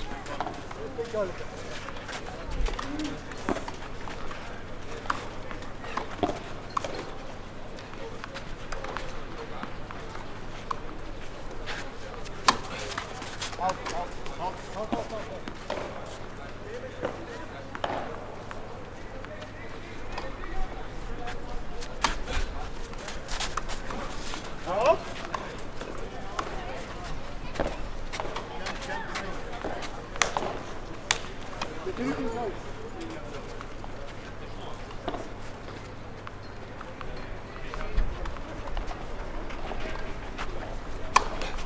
B. Radvilaitės str., Vilnius - Tennis court action
Sounds of the Bernardinai garden tennis court during busy hours. Recorded with ZOOM H5.
Vilniaus miesto savivaldybė, Vilniaus apskritis, Lietuva, May 2021